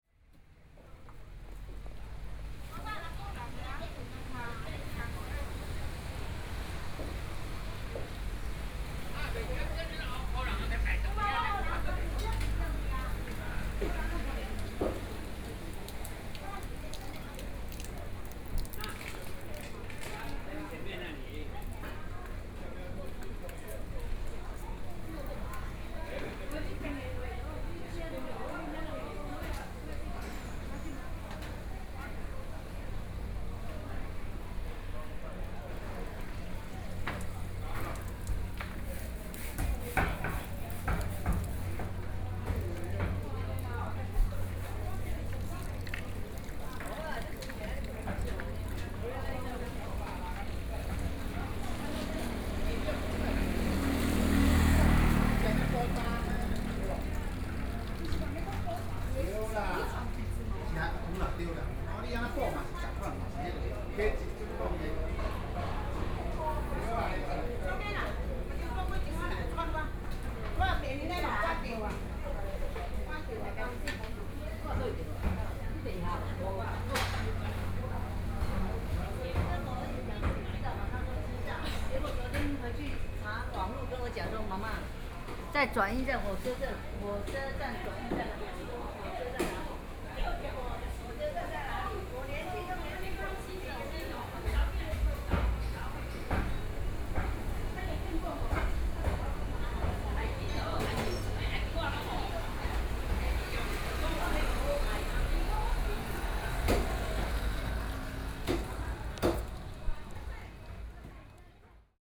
Su'ao Township, Yilan County - walking in the Market
Vegetable market next to the port, Zoom H4n+ Soundman OKM II